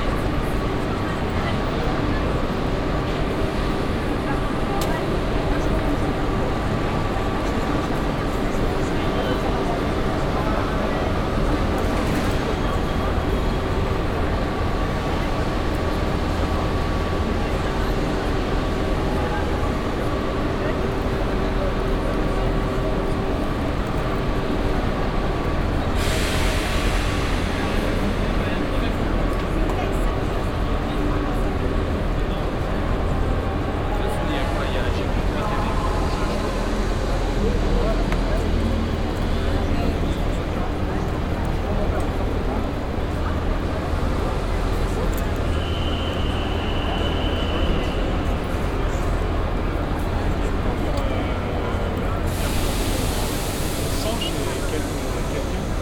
paris, gare de lyon, main hall, train platform
atmosphere at the station main hall, an announcement
cityscapes international: socail ambiences and topographic field recordings
13 October, 16:30